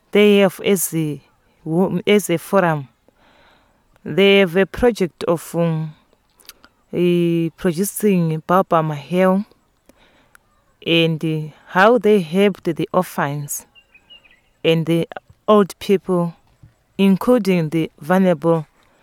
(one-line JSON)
{"title": "Chibondo Primary, Binga, Zimbabwe - Margaret summerizes...", "date": "2016-07-08 09:00:00", "description": "Margaret Munkuli gives an English summary of Maria's speech.", "latitude": "-17.76", "longitude": "27.41", "altitude": "628", "timezone": "Africa/Harare"}